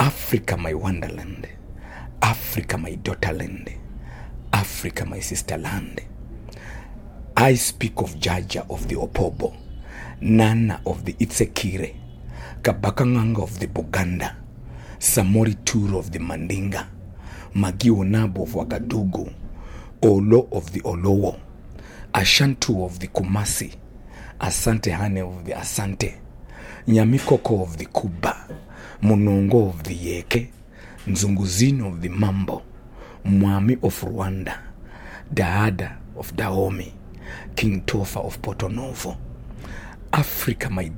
The Book Cafe, Harare, Zimbabwe - The Black Poet recites “Africa, my Wonderland…”
The Black Poet aka Mbizo Chiracha recites his work for my mic in the small accountant’s office at the Book Café Harare, where he often presents his songs during Sistaz Open Mic and other public events. In the middle of the piece the poet asks: “Where are you African names? In which clouds are you buried…?”